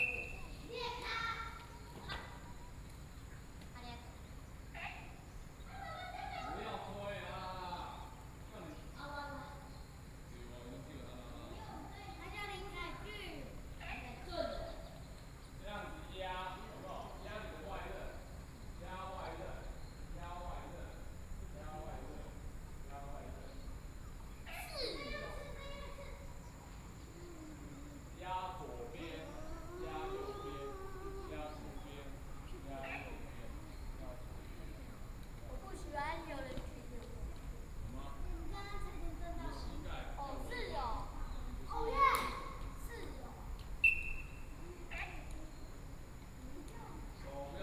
大同鄉崙埤村, Yilan County - Children and teachers
Children and teachers, Children are learning inline wheels, Traffic Sound, At the roadside
Sony PCM D50+ Soundman OKM II